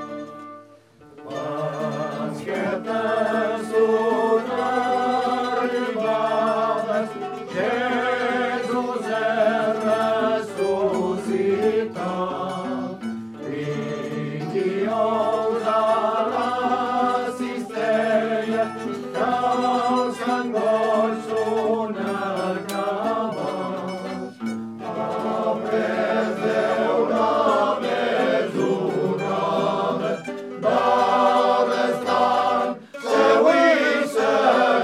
France, Ille, Goigs dels Ous - Goigs dels Ous
Goigs dels Ous (Joy of Eggs) are traditional Easter songs, ancient Catalan hymns sung by male choirs, singing at people's places at night the two weeks before Easter.